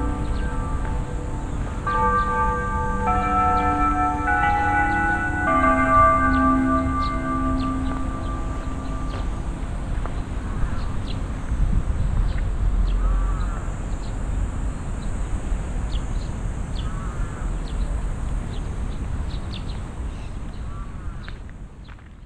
tokyo, kiyosumishirakawa garden, closing bell
the evening big ben like closing bell - echoing across the lake
international city scapes - social ambiences and topographic field recordings
July 2010